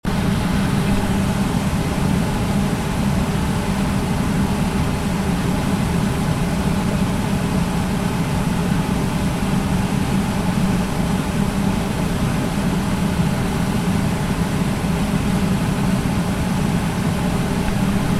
School terrain, Zoetermeer
Fan on soundwalk
Zoetermeer, The Netherlands, 13 October 2010, 17:20